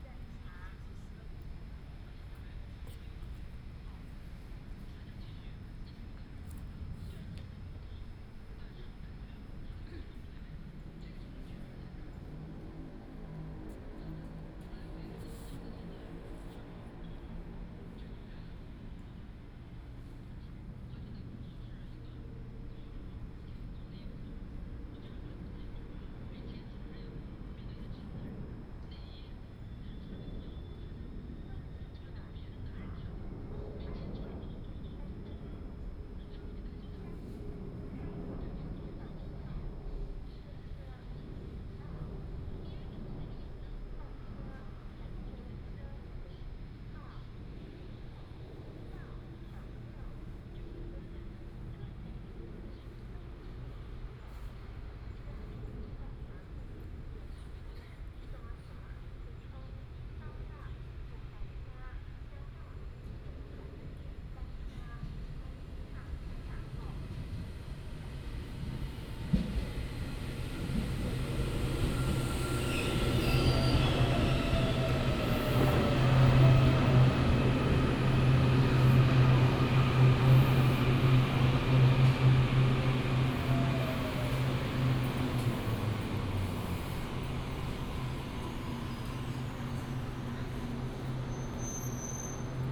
Hukou Station, Hukou Township - Train traveling through
Train traveling through, At the station platform